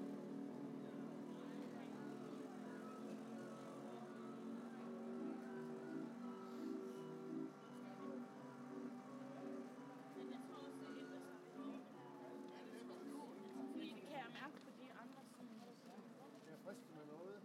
Randers C, Randers, Danmark - Marketday
From a weekly market day in the center of Randers. People is buying Honey, flowers and vegestables and cheese.